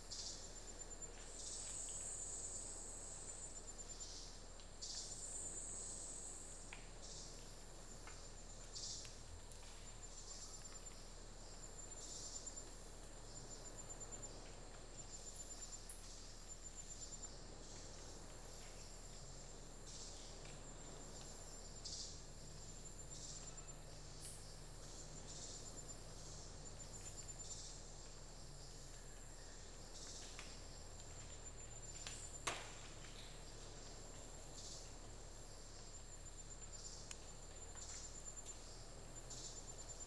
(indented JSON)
{
  "title": "Daintree National Park, QLD, Australia - evening at the bottom of mount sorrow",
  "date": "2014-01-02 18:20:00",
  "description": "recorded just as night was beginning. this was very close to the infamous bloomfield track and occasionally you can hear cars driving against the dirt road. walking along this road was very unpleasant as you would very quickly become covered in dust, and the leaves of the trees in the surrounding rainforest were also covered.\nrecorded with an AT BP4025 into an Olympus LS-100.",
  "latitude": "-16.08",
  "longitude": "145.46",
  "altitude": "95",
  "timezone": "Australia/Brisbane"
}